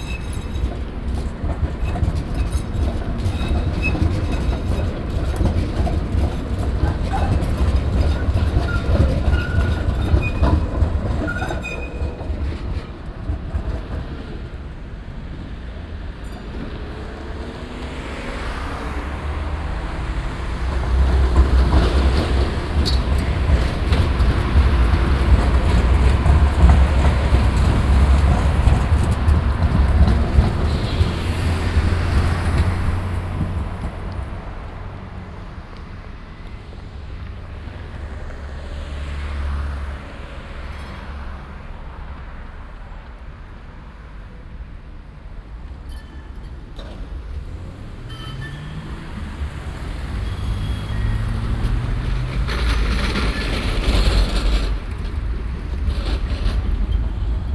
cologne, barbarossaplatz, verkehrsabfluss neue weyerstrasse - koeln, barbarossaplatz, verkehrsabfluss neue weyerstrasse
strassen- und bahnverkehr am stärksten befahrenen platz von köln - aufnahme: morgens
soundmap nrw: